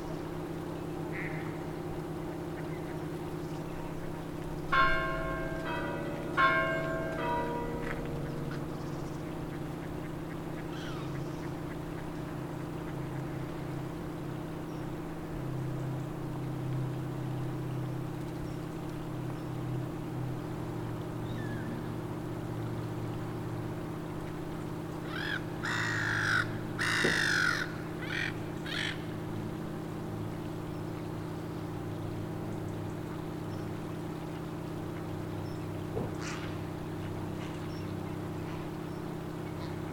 A detailed recording of wildlife and suroundings at the bend of the River Coquet as it leaves the cozy village of Warkworth, Northumberland.
Recorded on an early Saturday afternoon in the Spring 2015.
Morpeth, UK